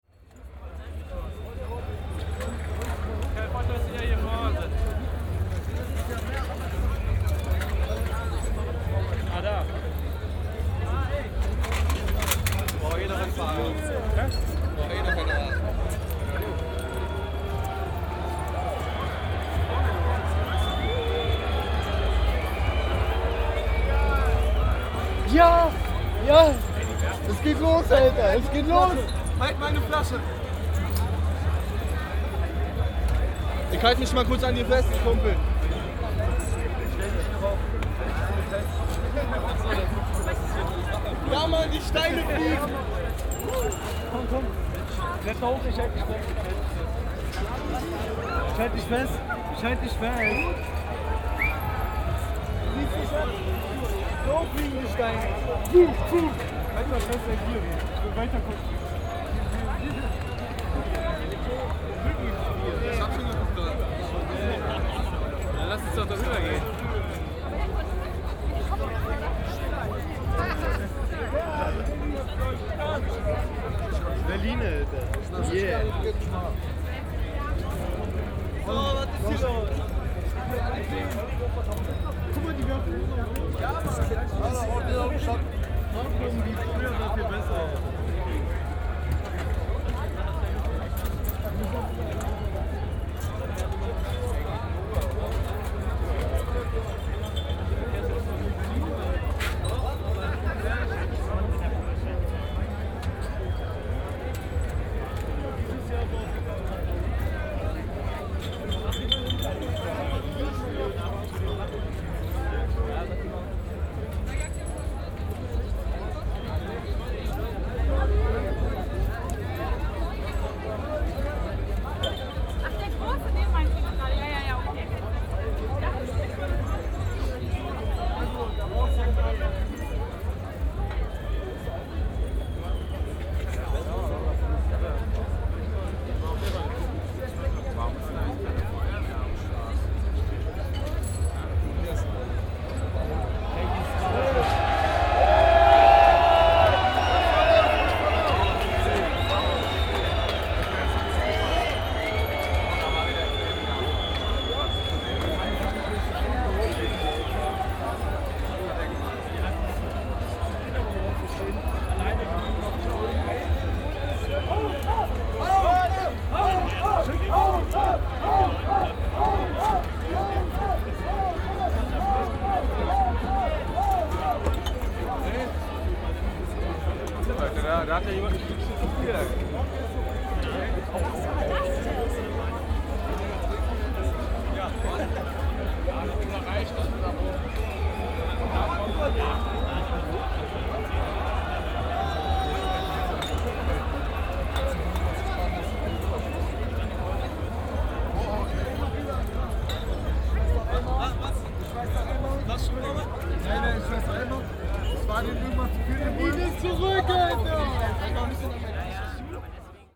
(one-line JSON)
{"title": "kreuzberg, kotti, mayday", "date": "2009-05-01 23:30:00", "description": "01.05.2009 23:30 may day party and demonstration against capitalism & co.\npolice fighting with the left wind black block", "latitude": "52.50", "longitude": "13.42", "altitude": "43", "timezone": "Europe/Berlin"}